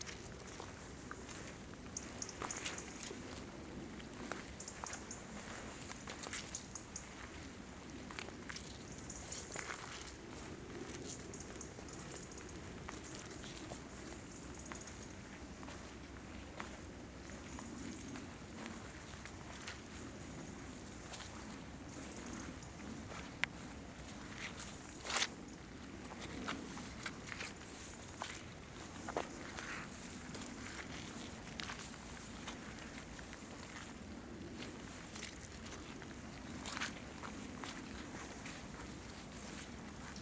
Po River, Calendasco (PC), Italy - walking into mud
light rain, dark sky at dusk, stading under trees, then walking on muddy terrain.
Calendasco, Province of Piacenza, Italy, October 2012